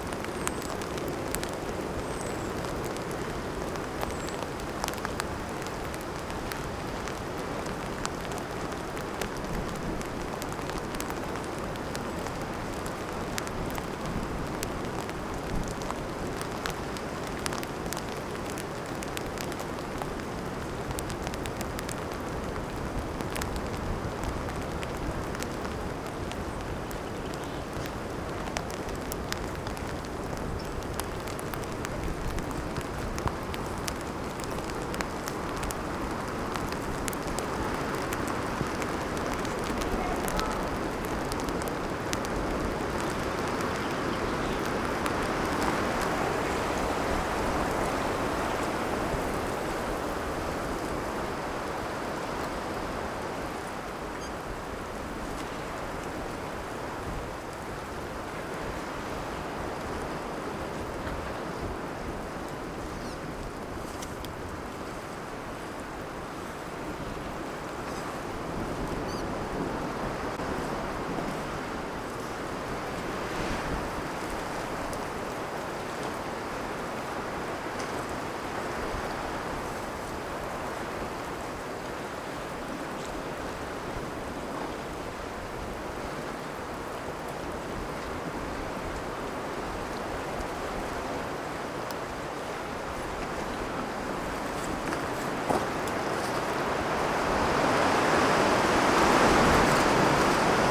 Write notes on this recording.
La pluie tombe sur le sol meuble entre les arbres et la plage.Un peu de vent. Soft rain falling on soft dirt under some trees, next to the beach.A little wind. /Oktava mk012 ORTF & SD mixpre & Zoom h4n